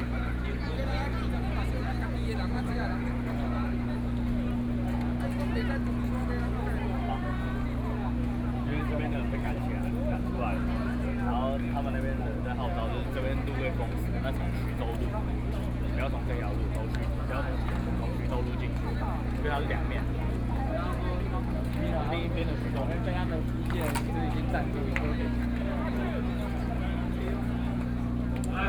Jinan Rd, Taipei City - Nonviolent occupation
Nonviolent occupation, To protest the government's dereliction of duty and destruction of human rights, Zoom H4n+ Soundman OKM II
18 August 2013, ~23:00